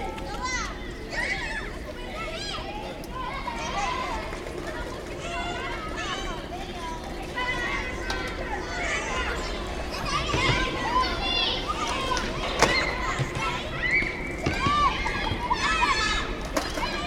Willem Buytewechstraat, Rotterdam, Netherlands - Kids skateboarding
A group of kids practices skateboarding on the small football pitch. A helicopter and a plane join the soundscape too. The architecture of this location creates a reverberant acoustic that seems to amplify the sounds. The recording was made using Uši Pro pair and zoom H8.